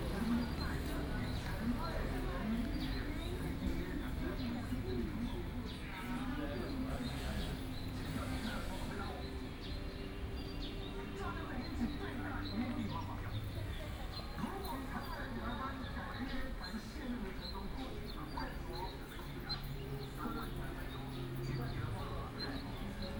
Ln., He 1st Rd., Zhongzheng Dist., Keelung City - Small roadway
Small roadway, In front of the temple, TV broadcast audio
Keelung City, Taiwan, 4 August 2016